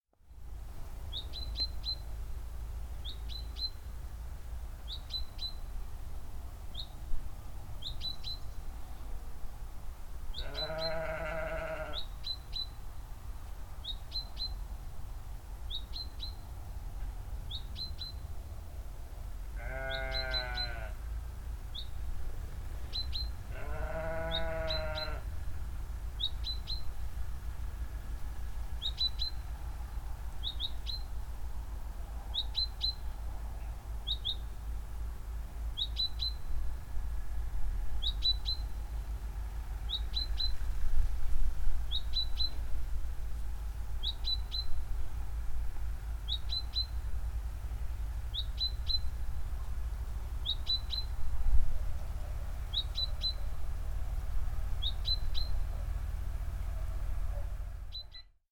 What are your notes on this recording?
This is the sound of Julia Desch's meadow in the summertime when she was still at Beech Hill Farm. This is the place where I discovered how important it is to care about wool and where it's from, and these are her sheep baa-ing along with the birds.